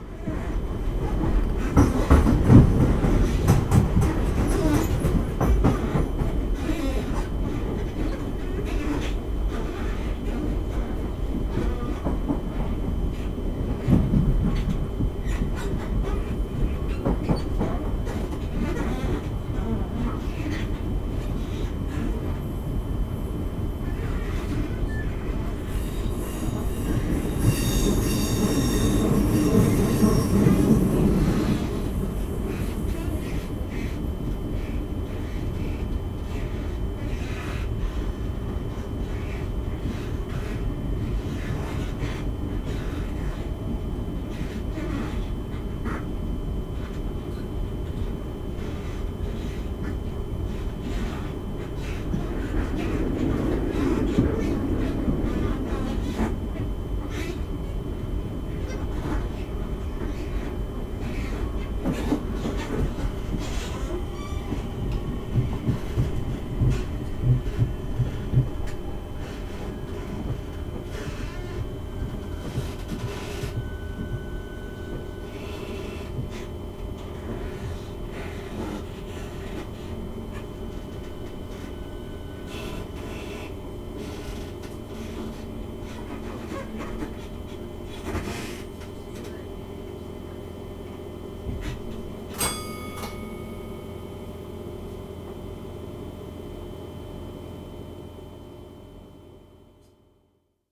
London Borough of Southwark, Greater London, UK - Creaky train between Waterloo East and London Bridge
This is the lovely creaky sound of the train passing between Waterloo East and London Bridge station. If I remember correctly, I made the recording on 17th April, 2011, after going with my friend Kate to the London Marathon to watch her partner running in the race.